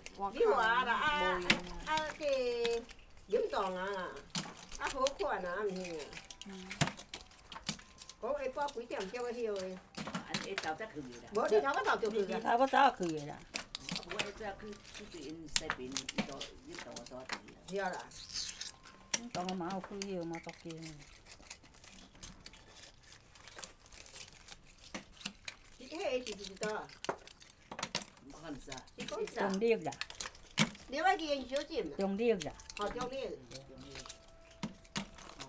芳苑鄉芳中村, Changhua County - digging oysters
A group of old women are digging oysters
Zoom H6 MS
Fangyuan Township, 芳漢路芳二段, 8 March 2014, 14:22